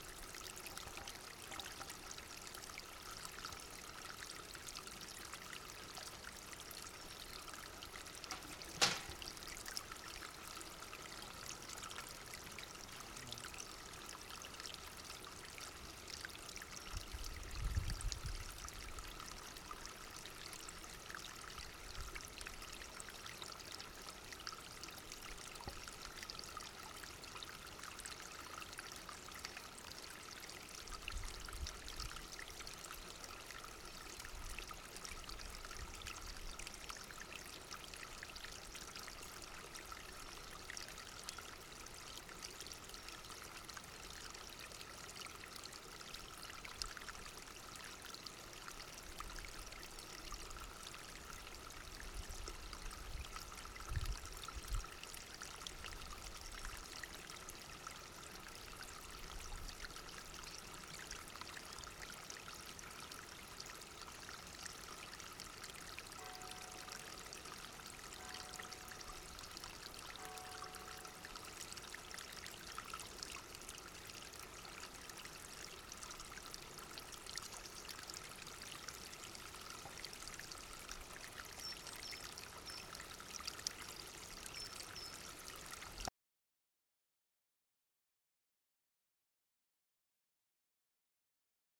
Cipressa, Imperia, Italien - Small stream in the mountains
Small stream in the mountains of Liguria. Later on you hear church bell.